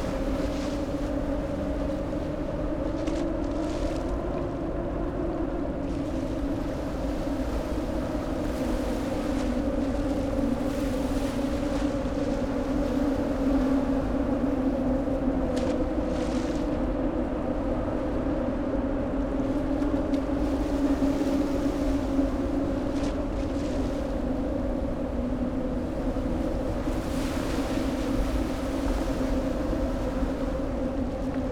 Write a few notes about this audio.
place revisited on a warm October afternoon (Sony PCM D50, DPA4060)